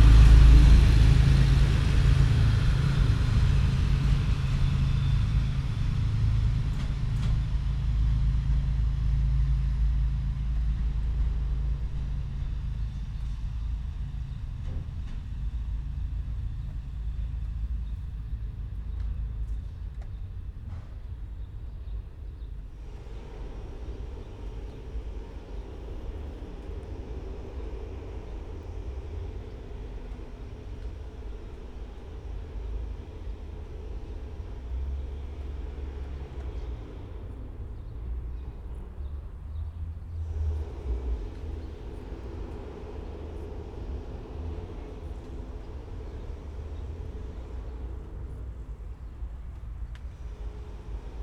Maribor, Studenci station, cargo terminals - industrial sounds
a train, sound from inside a factory buildung, voices.
(SD702 DPA4060)